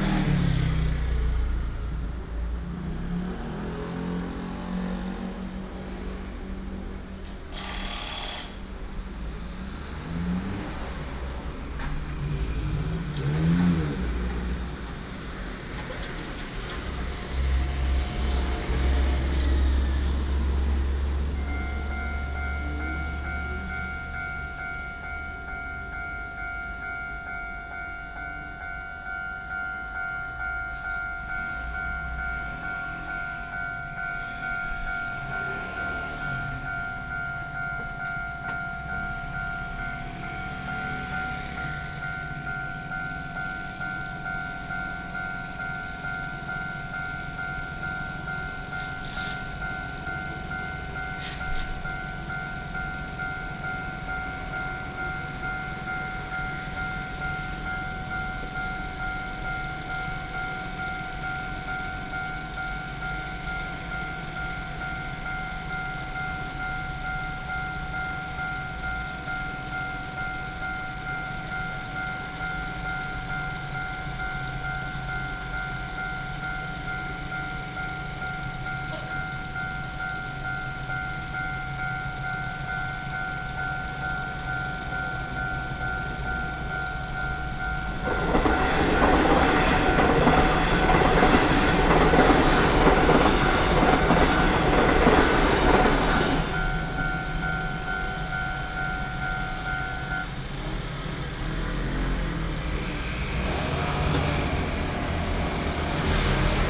Ln., Dahu Rd., Yingge Dist. - the train runs through
the train runs through, traffic sound, Railroad Crossing
Yingge District, New Taipei City, Taiwan